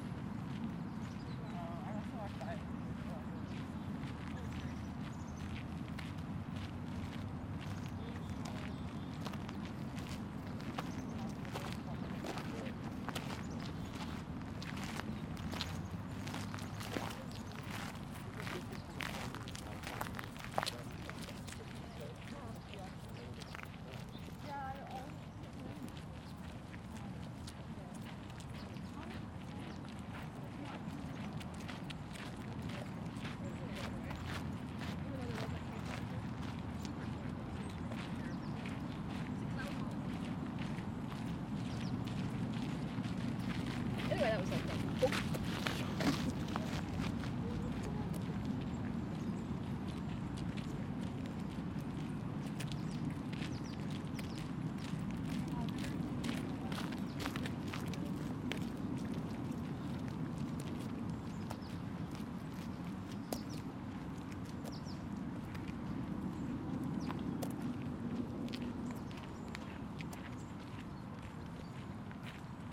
Part one of a soundwalk on July 18th, 2010 for World Listening Day in Greenlake Park in Seattle Washington.
WA, USA, 18 July 2010, 12:00